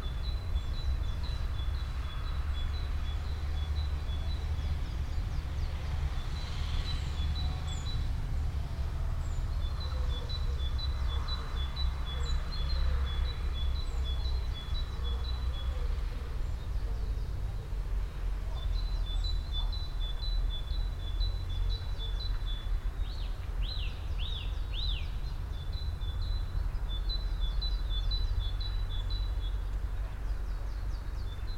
Martha-Stein-Weg, Bad Berka, Deutschland - Early Spring in Germany
Binaural recording of a feint sign of early Spring 2021 in a Park in Germany. Best spatial imaging with headphones.
Recording technology: BEN- Binaural Encoding Node built with LOM MikroUsi Pro (XLR version) and Zoom F4.